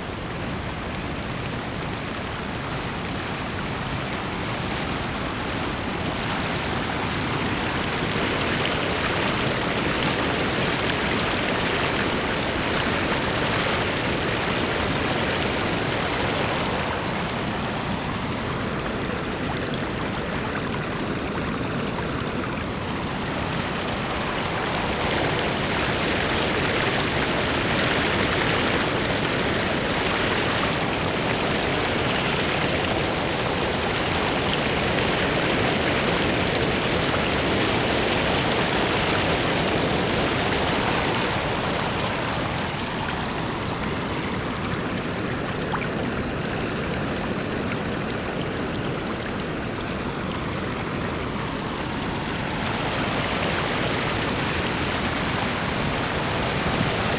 {
  "title": "Zagreb, Hrvatska - Water stream",
  "date": "2014-01-24 15:14:00",
  "description": "Water stream on the Sava river, recorded with Zoom H4n.",
  "latitude": "45.78",
  "longitude": "16.01",
  "altitude": "98",
  "timezone": "Europe/Zagreb"
}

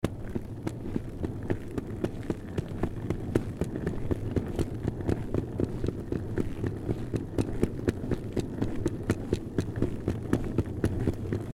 {
  "title": "Pulling a Trolley over the street - Trolley Pulling Wheelnoise Shoes Creaking",
  "date": "2012-08-20 23:15:00",
  "description": "Trolley Pulling Wheelnoise Shoes Creaking",
  "latitude": "48.43",
  "longitude": "10.03",
  "altitude": "554",
  "timezone": "Europe/Berlin"
}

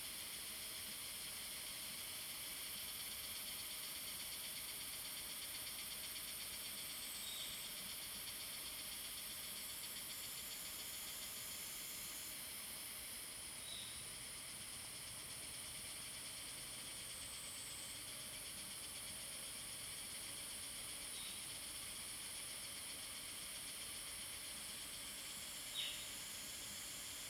{
  "title": "華龍巷, 五城村Nantou County - Cicada sounds",
  "date": "2016-05-05 14:39:00",
  "description": "In the woods, Cicada sounds\nZoom H2n MS+XY",
  "latitude": "23.92",
  "longitude": "120.88",
  "altitude": "726",
  "timezone": "Asia/Taipei"
}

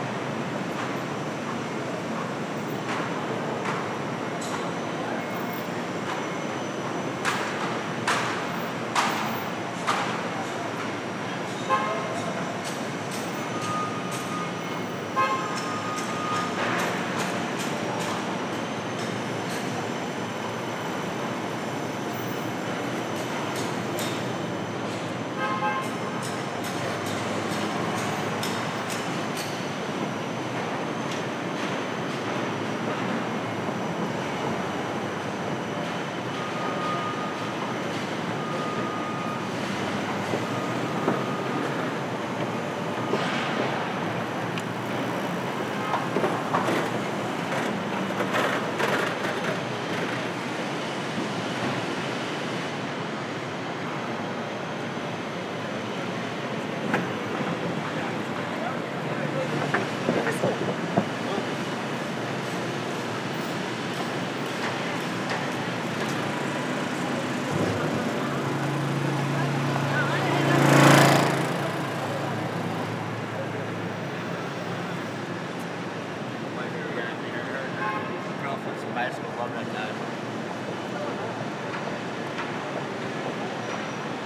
{
  "title": "W 47th St, New York, NY, USA - Soundwalk to Times Square, Midtown",
  "date": "2022-08-23 15:20:00",
  "description": "Soundwalk through Midtown to Times Square.",
  "latitude": "40.76",
  "longitude": "-73.99",
  "altitude": "24",
  "timezone": "America/New_York"
}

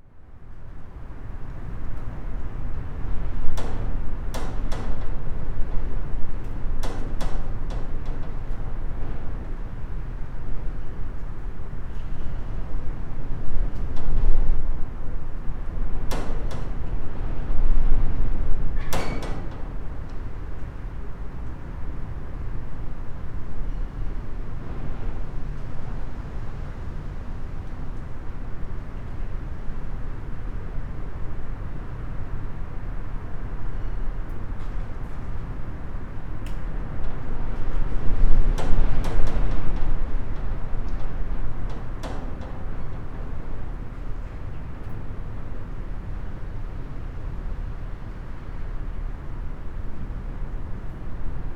{
  "title": "Punto Franco Nord, house, Trieste, Italy - rooftop flap",
  "date": "2013-09-11 16:05:00",
  "description": "broken ceiling with metal flap ... on the second floor of abandoned house number 25 in old harbor of Trieste, wind and train passes",
  "latitude": "45.67",
  "longitude": "13.76",
  "altitude": "3",
  "timezone": "Europe/Rome"
}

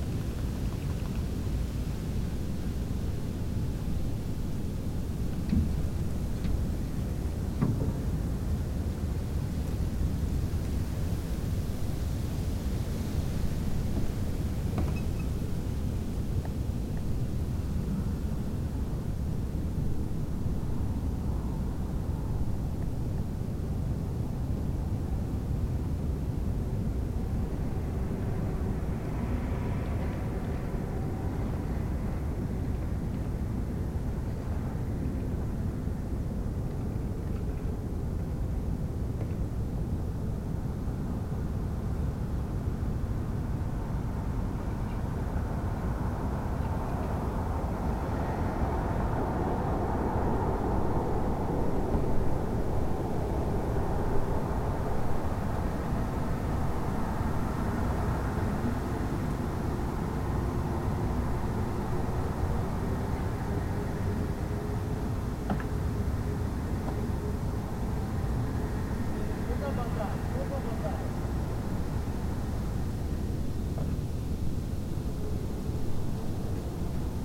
{
  "title": "Moscow, Chasovaya St. - Old New Year Snowstorm",
  "date": "2021-01-14 01:00:00",
  "description": "In Russia many people celebrate New Year’s Eve in the Julian calendar. It's like the final afterparty of the New Year celebration. We call it Old New Year. Like \"Happy Old New Year\", we say. This time it was accompanied by a heavy and beautiful snowstorm.\nORTF, Pair of AE5100, Zoom F6.",
  "latitude": "55.81",
  "longitude": "37.53",
  "altitude": "168",
  "timezone": "Europe/Moscow"
}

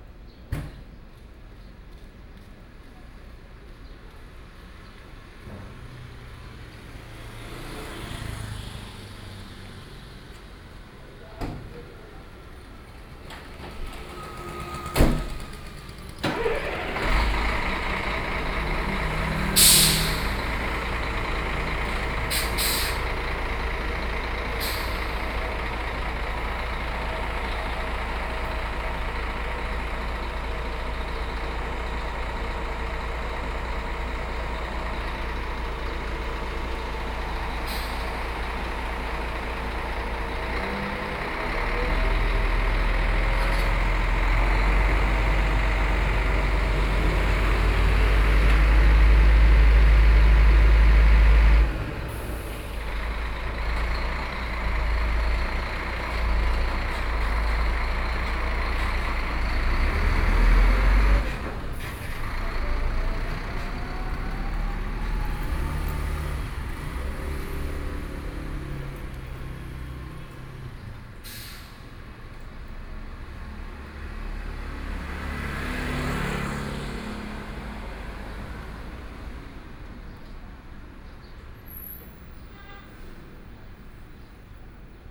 {
  "title": "天成旅社, 宜蘭市新興里 - Truck unloading",
  "date": "2014-07-27 09:26:00",
  "description": "At the roadside, Truck unloading, Traffic Sound\nSony PCM D50+ Soundman OKM II",
  "latitude": "24.76",
  "longitude": "121.76",
  "altitude": "16",
  "timezone": "Asia/Taipei"
}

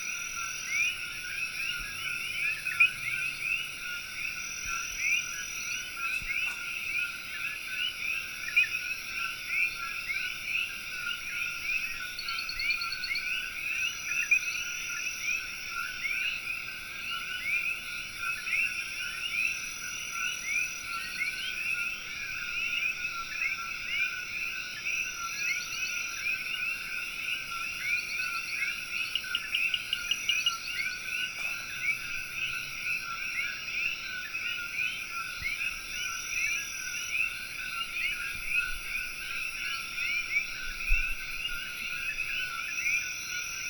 {
  "title": "Unnamed Road, Jayuya, Ponce, Puerto Rico - Ruta panorámica",
  "date": "2018-08-10 23:35:00",
  "description": "This kind of nightlife is more lively and frenzied than the one in a city club. Snippet of a sound landscape recorded in our house near el Toro Negro forest in Puerto Rico, where we could hear the deafening, yet luscious and vivid sounds of many insects, birds and frogs among unidentified buzz of distant activity.\nIn Puerto Rico frogs receive the onomatopoeic name of “coqui” because their sound resembles more the chirping and singing that birds usually do. Their call is composed by two syllables: deeper [koo] to put off other males and mark their territory, and higher [kee] to attract females (Narins, P. and Capranica, R. 1976. Sexual differences in the auditory system of the tree frog Eleutherodactylus coqui. Science, 192(4237), pp.378-380). Reaching up to 95dB from 3 feet away, the species heard in this recording is Eleutherodactylus coqui, arguably one of the loudest frogs in the world (Narins, P. 1995. Frog Communication. Scientific American, 273(2), pp.78-83).",
  "latitude": "18.16",
  "longitude": "-66.60",
  "altitude": "931",
  "timezone": "GMT+1"
}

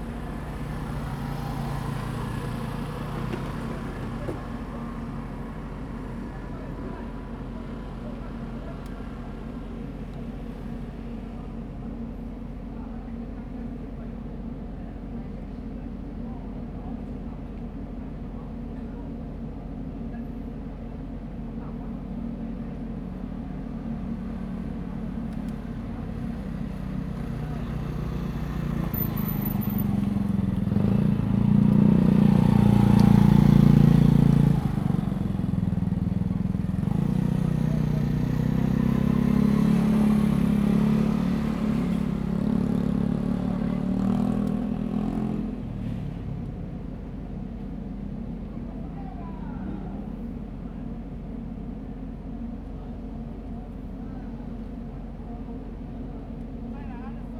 {
  "title": "大福漁港, Hsiao Liouciou Island - In the fishing port",
  "date": "2014-11-01 15:17:00",
  "description": "In the fishing port, Traffic Sound\nZoom H2n MS +XY",
  "latitude": "22.33",
  "longitude": "120.37",
  "altitude": "4",
  "timezone": "Asia/Taipei"
}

